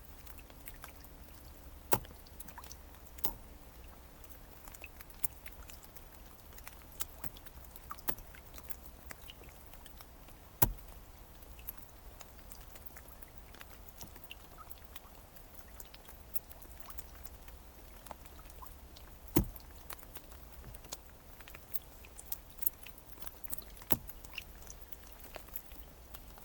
{"title": "Utena, Lithuania, raindrops on swamp", "date": "2021-12-18 16:00:00", "description": "cold and rain at the local swamp. water drops are falling on partly melted ice. unfortunatelly, I had no better mic to record than this smallest Instamic recording device", "latitude": "55.52", "longitude": "25.60", "altitude": "105", "timezone": "Europe/Vilnius"}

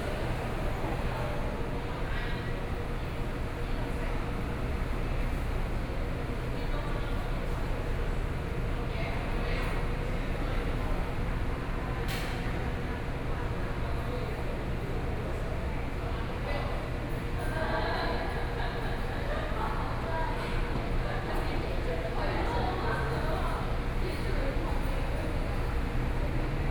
{"title": "國光客運, Kaohsiung City - In the bus station hall", "date": "2014-05-16 12:03:00", "description": "In the bus station hall", "latitude": "22.64", "longitude": "120.30", "altitude": "12", "timezone": "Asia/Taipei"}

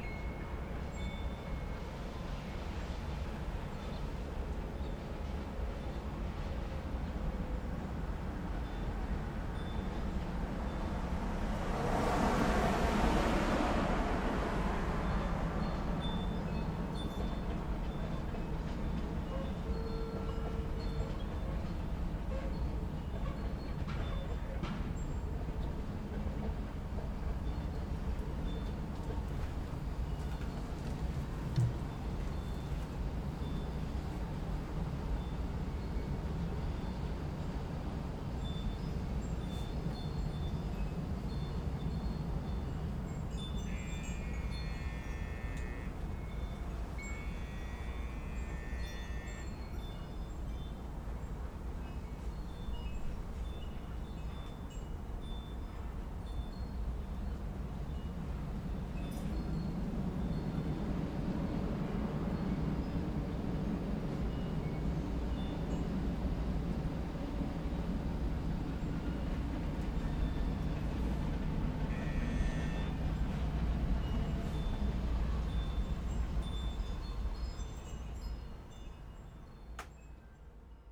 {"title": "St. Richmond, CA, USA - Street Daily", "date": "2013-11-13 13:00:00", "description": "I'm having the microphone pointed at the street and recorded some daily street sounds of the neighborhood.", "latitude": "37.94", "longitude": "-122.36", "altitude": "10", "timezone": "America/Los_Angeles"}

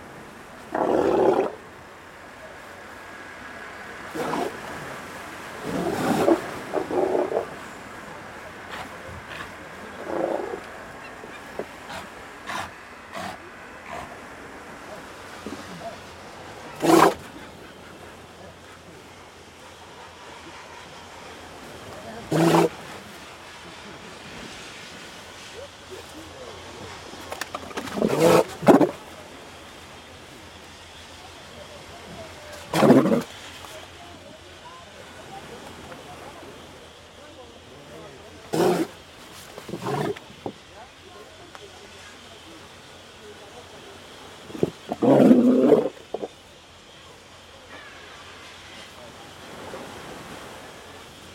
{"title": "Caleta Portales - Sea lions close to the port", "date": "2015-11-25 10:00:00", "description": "Sea lions close to the fish sellers at Caleta Portales.\nRecorded by a MS Schoeps CCM41+CCM8", "latitude": "-33.03", "longitude": "-71.59", "altitude": "12", "timezone": "America/Santiago"}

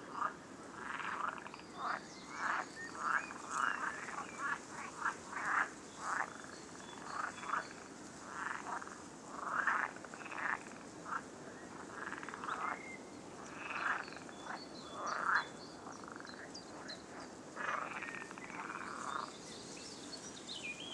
{"title": "Pakalniai, Lithuania, swamp, frogs, wind", "date": "2020-05-09 15:50:00", "description": "sitting at the swamp in a windy day", "latitude": "55.43", "longitude": "25.48", "altitude": "164", "timezone": "Europe/Vilnius"}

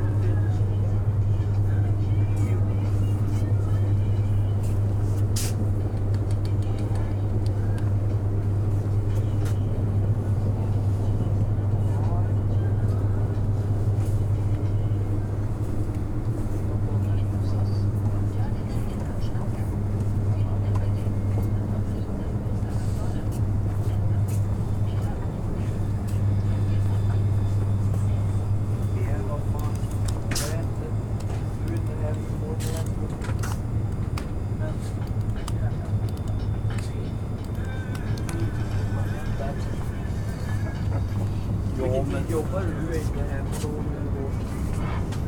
{"title": "Sollefteå, Sverige - Shopping food", "date": "2012-07-18 19:50:00", "description": "On the World Listening Day of 2012 - 18th july 2012. From a soundwalk in Sollefteå, Sweden. Shopping food at Coop Konsum shop in Sollefteå. WLD", "latitude": "63.17", "longitude": "17.28", "altitude": "24", "timezone": "Europe/Stockholm"}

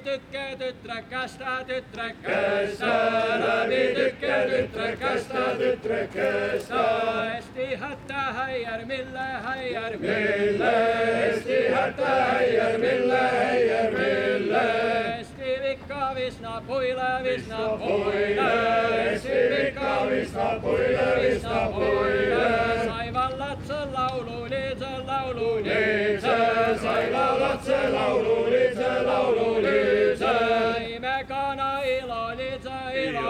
{"title": "Lossi, Dorpat, Estland - Lossi, Tartu - Male choir singing traditional Estonian songs in the park", "date": "2013-07-04 17:07:00", "description": "Lossi, Tartu - Male choir singing traditional Estonian songs in the park. Performance during the International Folklore Festival Baltica.\n[Hi-MD-recorder Sony MZ-NH900 with external microphone Beyerdynamic MCE 82]", "latitude": "58.38", "longitude": "26.72", "altitude": "51", "timezone": "Europe/Tallinn"}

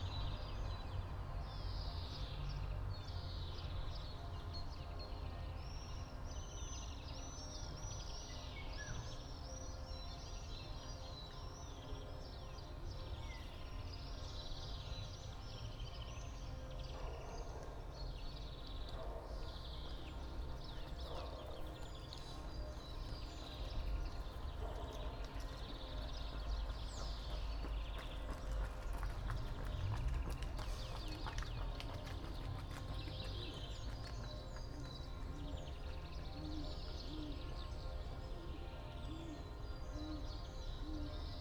{"title": "all the mornings of the ... - apr 21 2013 sun", "date": "2013-04-21 08:27:00", "latitude": "46.56", "longitude": "15.65", "altitude": "285", "timezone": "Europe/Ljubljana"}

